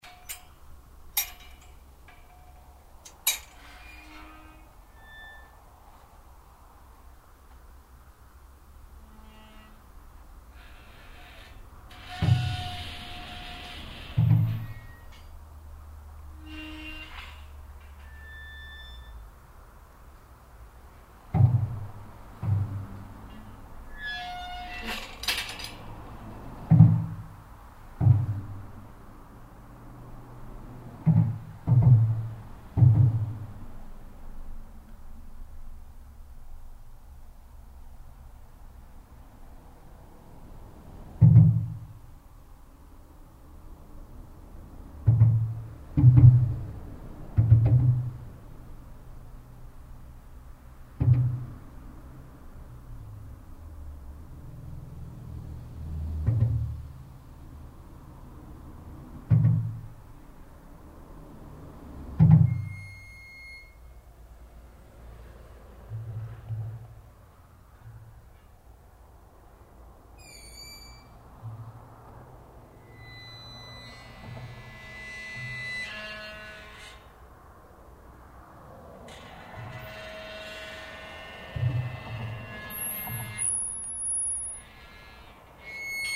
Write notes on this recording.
'soundwalk' between Bridport and West Bay. Binaural recordings of underpass traffic and gates.